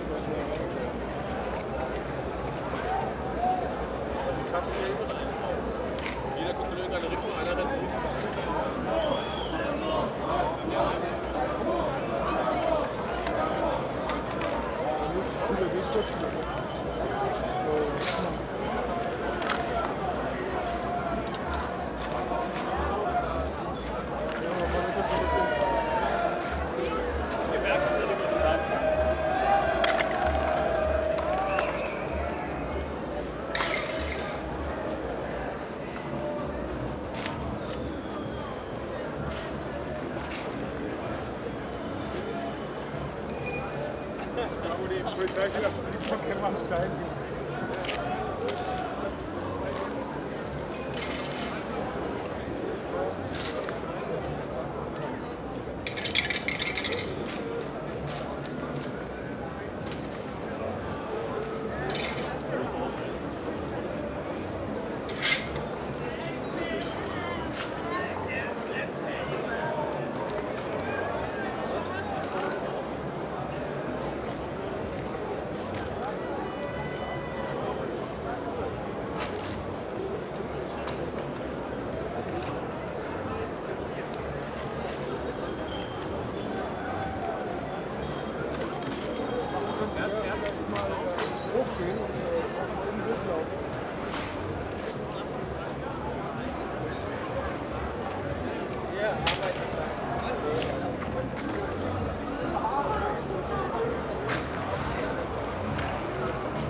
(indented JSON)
{
  "title": "berlin, skalitzer straße: 1st may soundwalk (4) - the city, the country & me: 1st may soundwalk (4)",
  "date": "2011-05-01 23:40:00",
  "description": "1st may soundwalk with udo noll\nthe city, the country & me: may 1, 2011",
  "latitude": "52.50",
  "longitude": "13.42",
  "altitude": "39",
  "timezone": "Europe/Berlin"
}